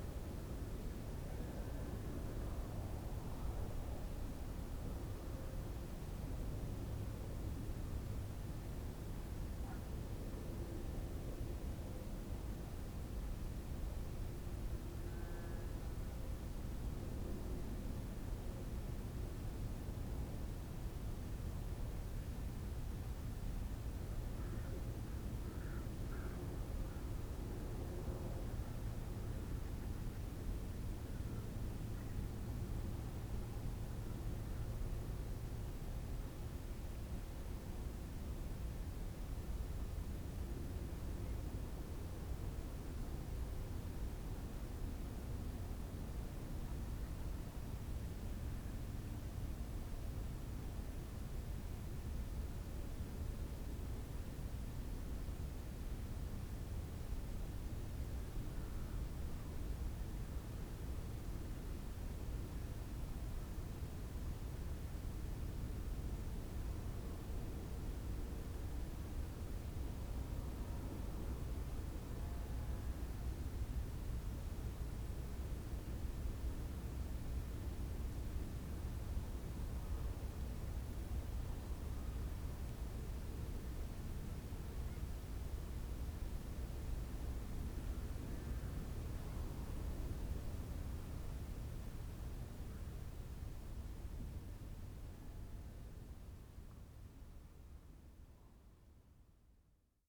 klein zicker: ehemalige sowjetische militärbasis - the city, the country & me: former soviet military base
cold winter day, quiet ambience of the former soviet military base
the city, the country & me: march 6, 2013